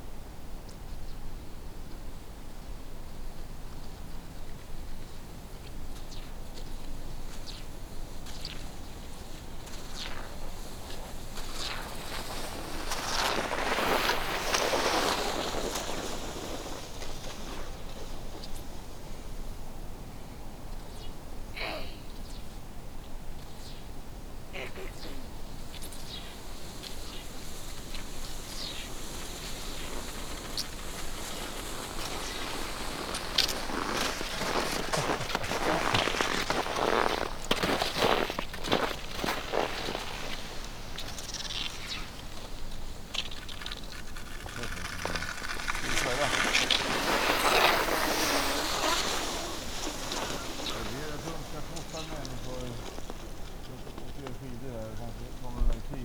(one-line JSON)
{"title": "Gammlia Forest, Umeå - Skiiers out on a Sunday in Gammlia Forest, Umeå", "date": "2011-01-23 11:41:00", "description": "Passing from right to left on a slight downhill, the cross country skiers pass with sounds of the skis swishing and their poles pinging.", "latitude": "63.83", "longitude": "20.29", "altitude": "64", "timezone": "Europe/Stockholm"}